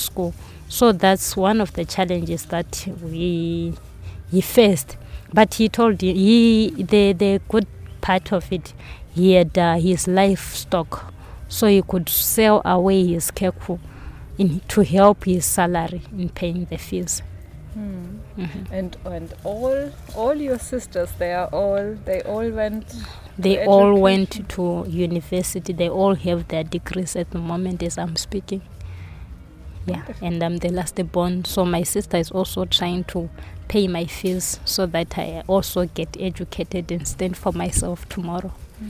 Binga Craft Centre, Zimbabwe - Linda Mudimba – education for rural women like me…
We are sitting with Linda in front of the Binga Craft Centre. I caught up with her here after Linda had a long day of working on deadlines in Basilwizi’s office. We are facing the busiest spot in the district; the market, shops, bars and taxi rank paint a vivid ambient backing track… Linda tells about the challenges that education poses to people from the rural areas and to women in particular; as well as the added challenge young BaTonga are facing as members of a minority tribe in Zimbabwe…
8 November, ~17:00